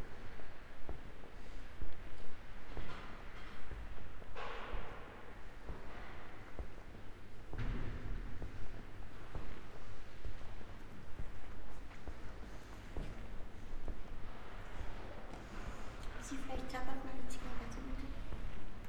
berlin: u-bahnhof schönleinstraße - empty station ambience
Berlin Schönleistr. U8 subway station, Sunday night, empty, a homeless person, city workers cleaning the station, train arrives at station. covid-19 wiped out most of the passengers in public transport these days
(Sony PCM D50, Primo EM172)